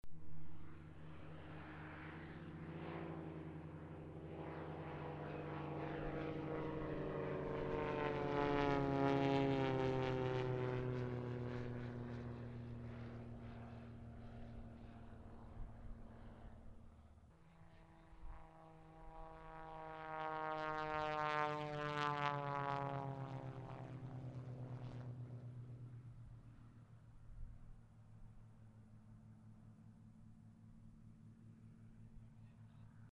Aerodrom Grobnik
Aero-meeting-show @ Grobnik field.
Pilatus plane in the air.
22 May 2007, 13:15